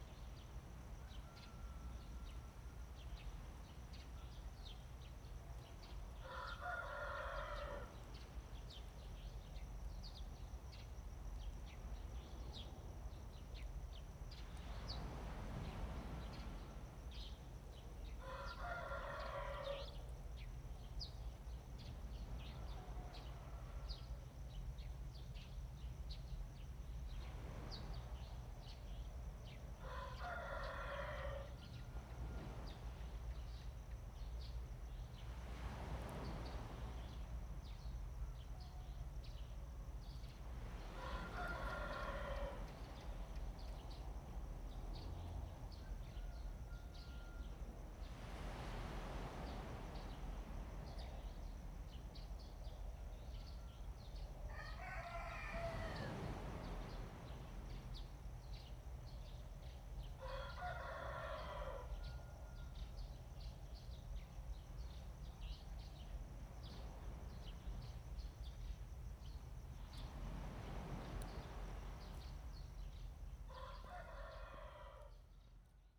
楓港海提, Fangshan Township - In the morning
In the morning next to the fishing port, Chicken crowing, Bird cry, Sound of the waves, Traffic sound
Zoom H2n MS+XY
Fangshan Township, Pingtung County, Taiwan, 28 March 2018, 05:03